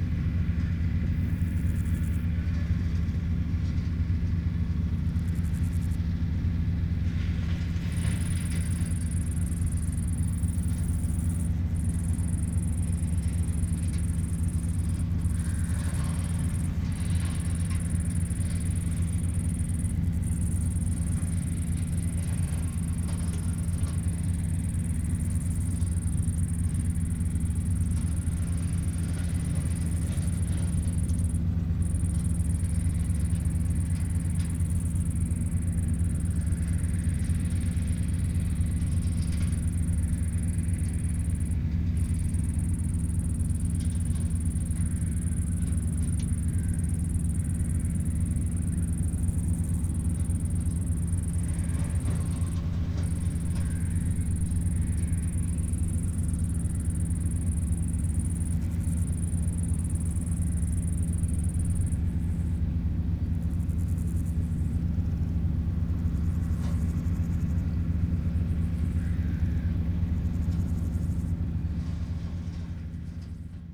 tractor chopping fallen brushes and grasshopper singing in the grass